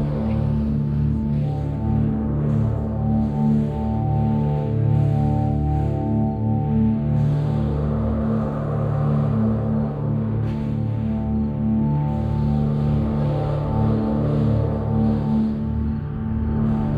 Cetatuia Park, Klausenburg, Rumänien - Cluj, Cetatuia, Fortess Hill project, rocket lift off
At the monument of Cetatuia. A recording of the multi channel night - lift off composition of the temporary sound and light installation project Fortress Hill. phase 1 - awakening of the mountain - phase 2 - shepard spiral scale - phase 3 - rocket lift off - phase 4 - going into space - phase 5 - listening through the spheres (excerpt) - total duration: 60 min.
Note the roof of the monument rattling and resonating with the sound waves.
- headphone listening recommeded.
Soundmap Fortress Hill//: Cetatuia - topographic field recordings, sound art installations and social ambiences